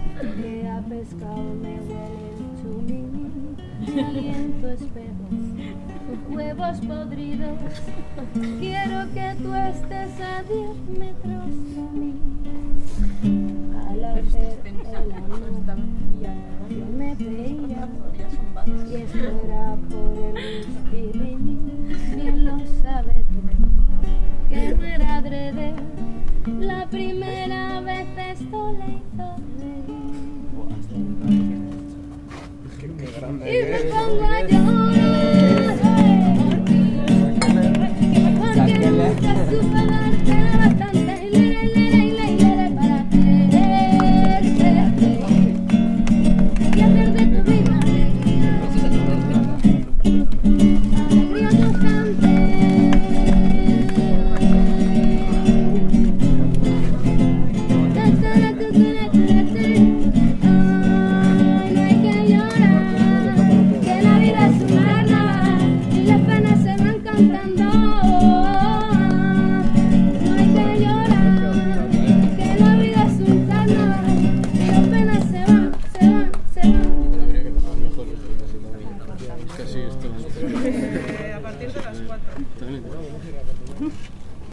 leioa bellas artes campa
more people coming to the music campa!!
Biscay, Spain